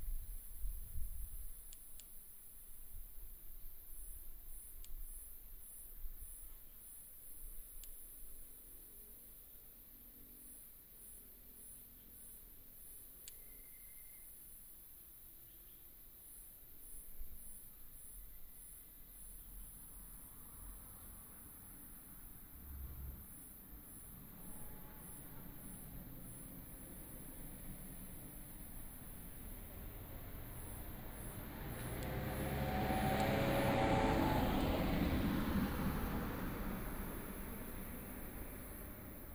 哭泣湖自然生態園區, Mudan Township - Birds and Traffic sound
Beside the road, The sound of Birds, Mountain road, Traffic sound